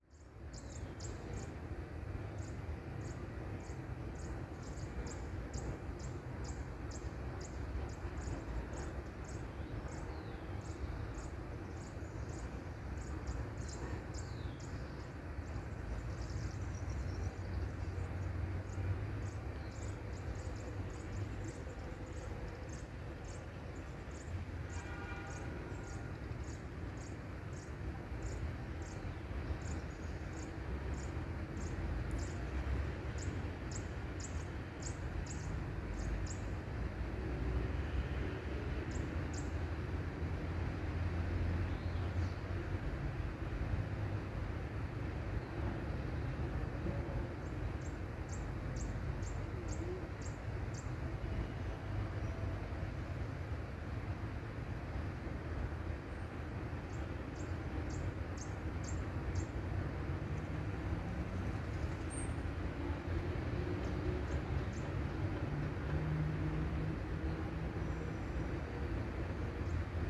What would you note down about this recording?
Park near 170th Street. Birds can be heard singing, cars, trucks and motorcycles pass in the background. Car alarms are heard, heavy ambient noise. Car alarm sound in the background, at the end a plane passing.